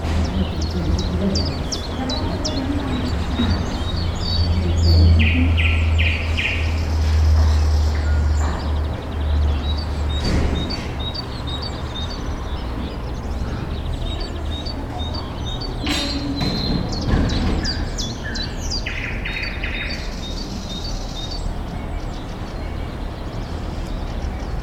jindrisska 18. inner yard
In the inner yard at Jindřišská street sings a nightingale, though just from the speakers placed in the passage. The yard is hosting the flower shop.
7 June 2011, 23:22